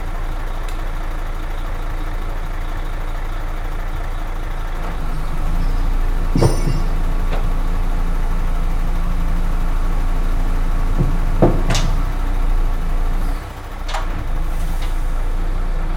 all the mornings of the ... - feb 5 2013 tue
Maribor, Slovenia, 5 February 2013, ~09:00